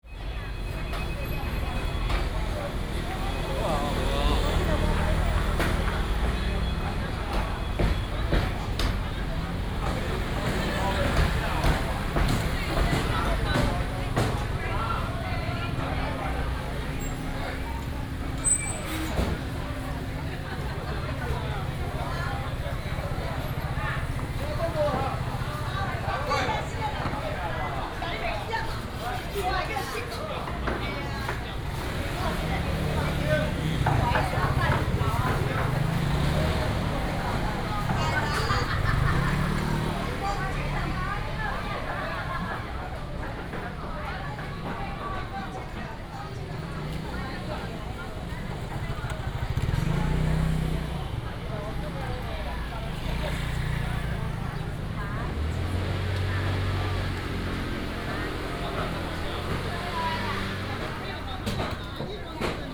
Walking through the market, From the outdoor market into the indoor market, Traffic sound, Many motorcycles
Taichung City, Taiwan